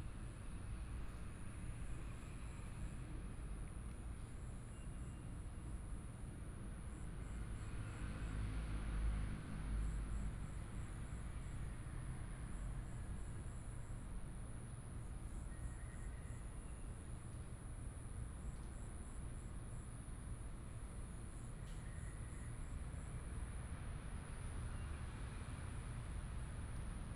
光復公園, Hsinchu City - Birds call
in the park, Birds call, Binaural recordings, Sony PCM D100+ Soundman OKM II